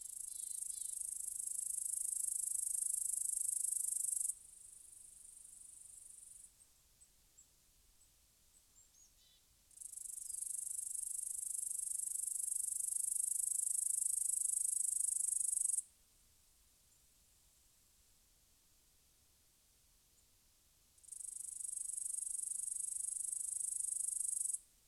Lithuania, country, grasshoppers
in the wild